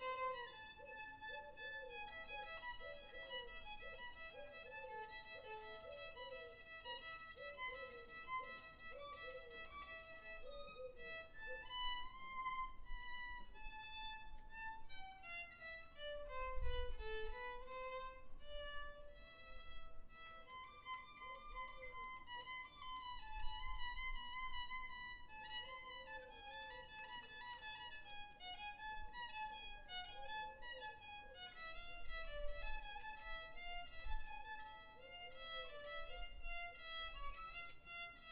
Rue Edmond Nocard, Maisons-Alfort, France - Coronavirus Covid 19 Street Concert Trumpet Violin
Street Concert for our careers during Covid 19 Containement with Voices, Pan, Trumpet and Violin, Song "Olé", "La Marseillaise" and Tribute to singer Christophe died the day before.
Concert improvisé tous les soirs à 20h dans une rue pavillonnaire pour soutenir les aides soignants pendant le confinement. Applaudissements, concert de casserole, trompette au balcon, violon, voix voisinage....
Enregistrement: Colin Prum
Île-de-France, France métropolitaine, France